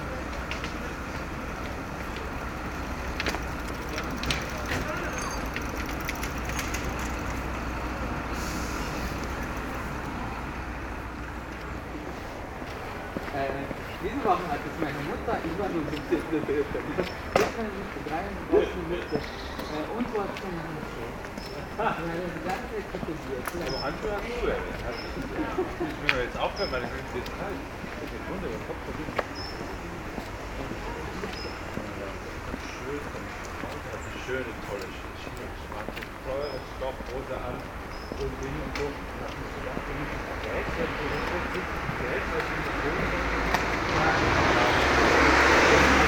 Planufer, Berlin, Deutschland - Soundwalk Planufer
Soundwalk: Along Planufer until Grimmstrasse
Friday afternoon, sunny (0° - 3° degree)
Entlang der Planufer bis Grimmstrasse
Freitag Nachmittag, sonnig (0° - 3° Grad)
Recorder / Aufnahmegerät: Zoom H2n
Mikrophones: Soundman OKM II Klassik solo